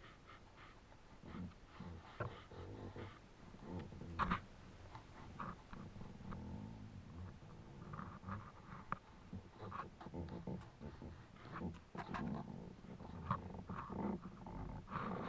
'playing' fallen trees below chasseline - KODAMA session
Improvisation on contact-microphoned falled trees below the village of Chasseline, France - during KODAMA residency August 2009